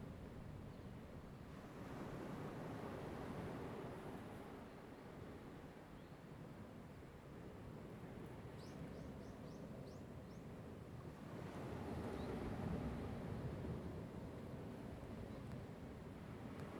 Koto island, Taitung County - sound of the waves
In the beach, Sound of the waves
Zoom H2n MS +XY
Taitung County, Taiwan, 30 October